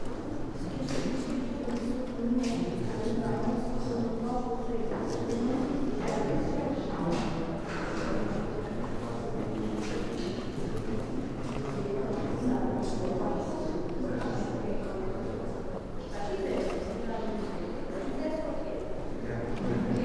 Sé e São Pedro, Evora, Portugal - Sé de Évora
Inside Sé de Évora (church), footsteps, people talking, resonant space, stereo, AKG MS setup. Canford preamp, microtrack 2496, June 2006
16 April 2007, 16:09